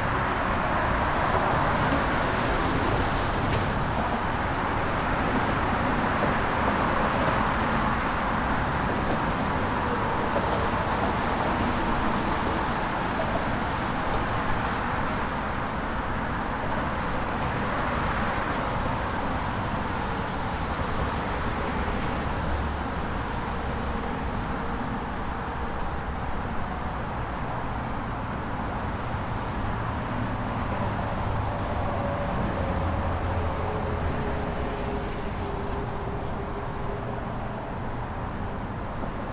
Williamsburg Bridge, Brooklyn, NY, USA - Traffic on the Williamsburg Bridge
Sounds of traffic on the Williamsburg Bridge.
Zoom h6
NYC, New York, USA, 9 August 2019